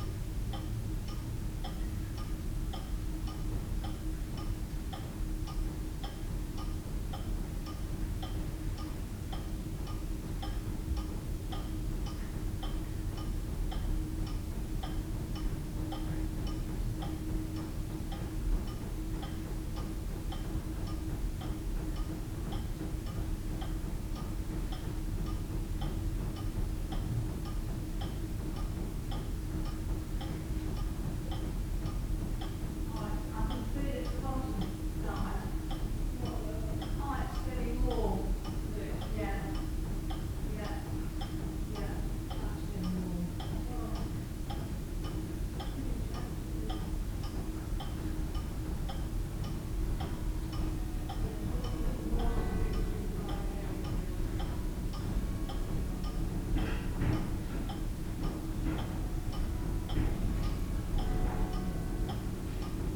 Having recorded the church clock chiming from the outside ... what did it sound like from the inside ..? wall clock ticking and chiming ... church clock chiming ... voices ... the heating system knocking ... lavalier mics clipped to a sandwich box lid ...
Whitby, UK